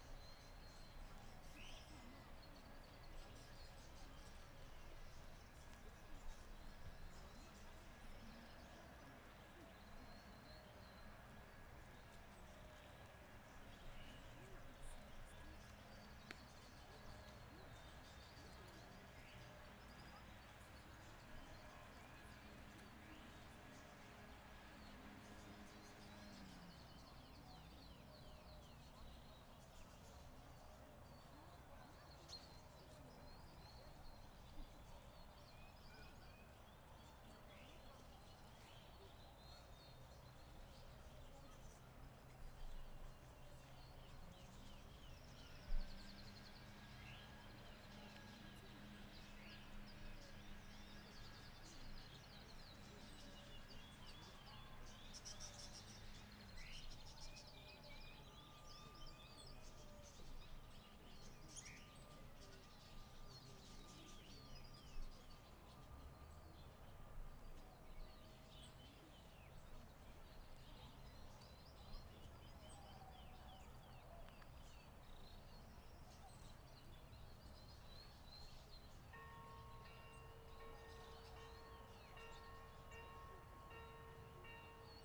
Thabor - St Hélier, Rennes, France - Oiseaux sur la pelouse
On entend des cloches. Des oiseaux picorent sur la pelouse. Les oiseaux s'envolent.